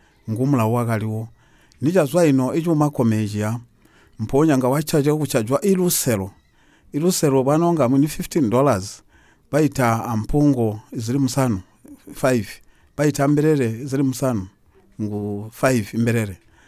Regina Munkuli interviews Mr. Mwinde, Historian at Chief Siansali’s Court, about the traditional tribal identification which was customary among Batonga women, namely the removal of the front six teeth. Regina then asks about the traditional marriage among the Batonga and Samuel Mwinde describes in detail how, and through whom a marriage was arranged between two families. The interview also briefly touches upon the performance of Ngoma Buntibe; Mr Mwinde explains that traditionally, it is played to honour a married man who has passed on, mainly, for a chief or headman. Traditionally, it is only performed in the context of a funeral.